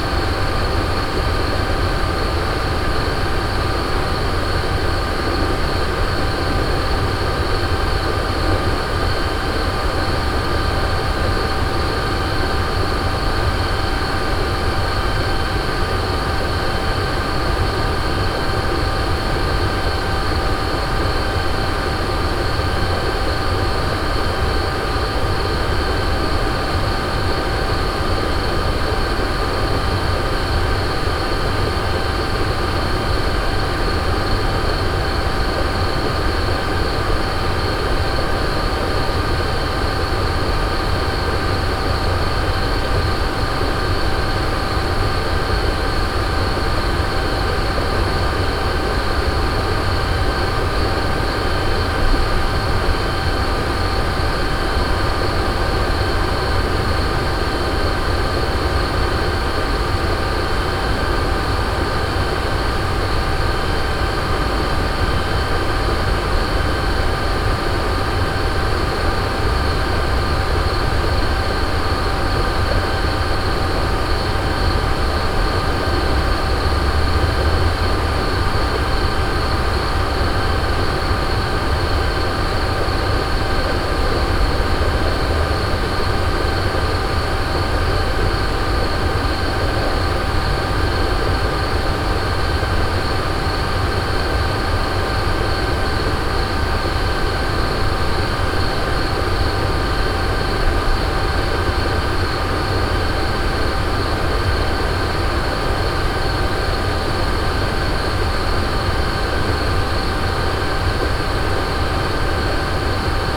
France, Auvergne, WWTP, night, insects, binaural
Moulins, France, 22 May 2011, ~01:00